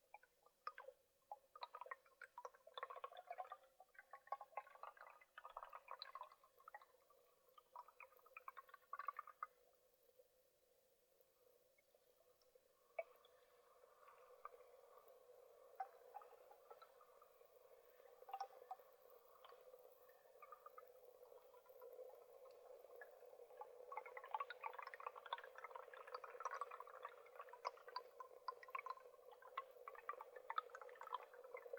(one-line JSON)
{"title": "Utena, Lithuania, lake underwater", "date": "2013-09-24 15:05:00", "description": "movements of waters on the bottom of the forest's lake", "latitude": "55.53", "longitude": "25.59", "altitude": "106", "timezone": "Europe/Vilnius"}